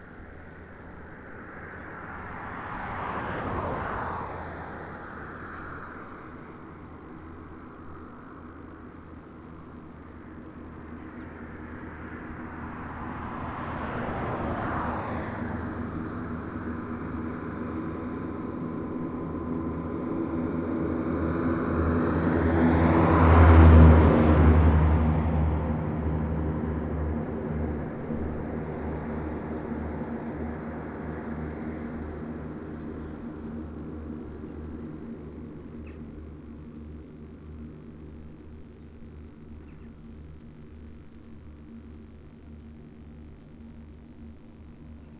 Lithuania, Siaudiniai, high voltage wires and traffic
crackling high voltage wires and traffic on the road